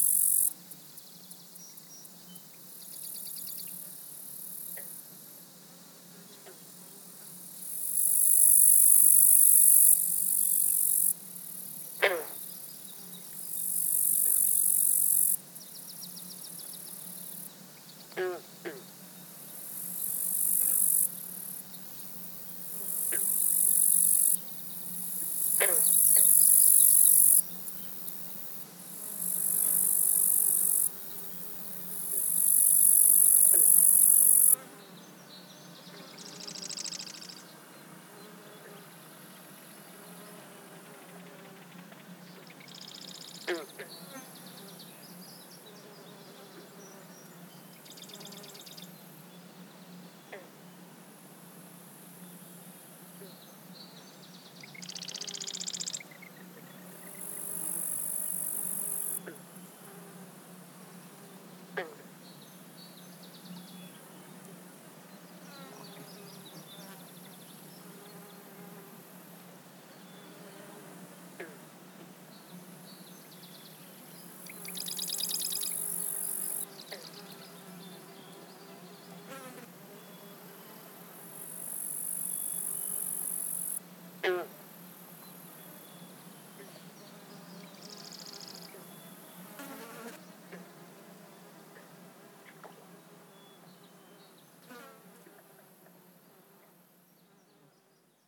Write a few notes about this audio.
Orthoptères, oiseaux et amphibiens dans les marais à Scirpe au bord du Saint-Laurent, juillet 2013